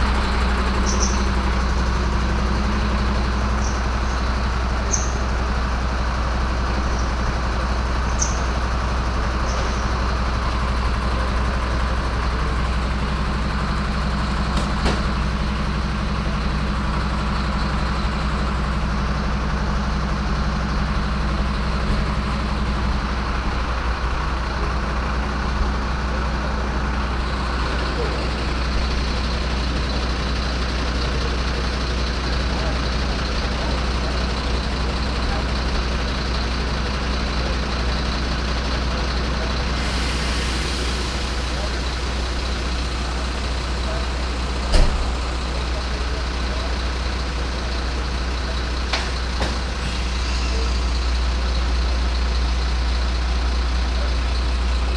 Morgens in einer kleinen Seitenstraße der Fußgängerzone. Ein Müllwagen mit laufendem Motor wird beladen und fährt weiter.
early in the morning, a garbage truck in the narrow road, loading and driving away
Projekt - Stadtklang//: Hörorte - topographic field recordings and social ambiencesrecordings and social ambiences
Essen, Germany, May 2011